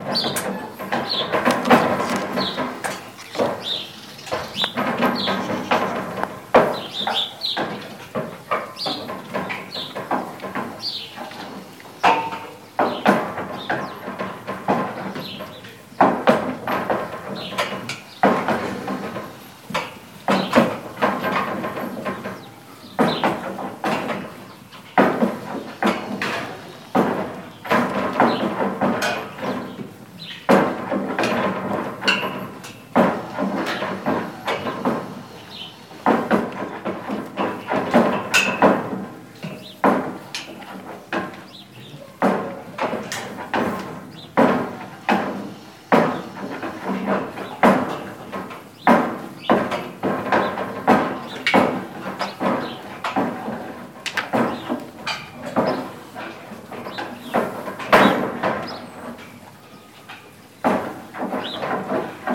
Ziegen in ihrem Stall, quicklebendig.
Sony-D100, int. Mic.
Region Hannover, Niedersachsen, Deutschland, 17 April, 17:36